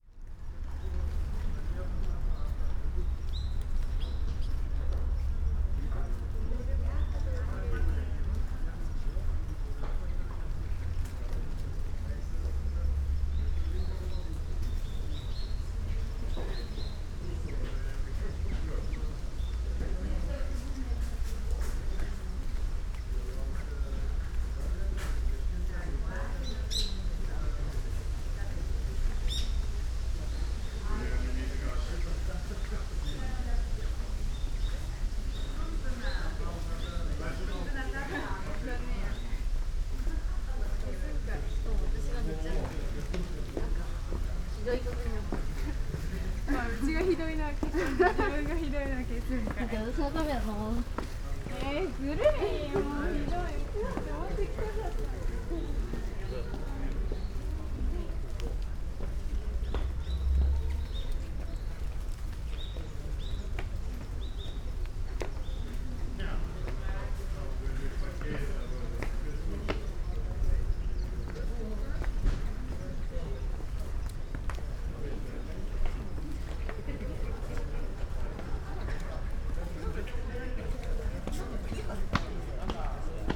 entrance, Royanji garden, Kyoto - soft rain of trees seeds
gardens sonority
stairs, steps, gravel path, people talking, trees, birds